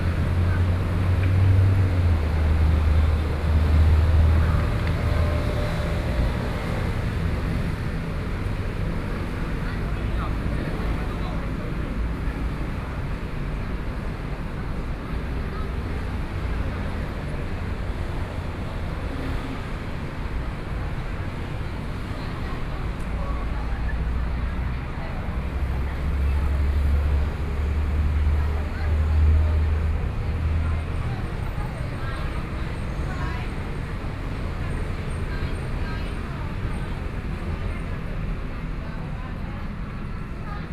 新興區玉衡里, Kaohsiung City - Sitting on the roadside
Sitting on the roadside, in the Shopping district, Traffic Sound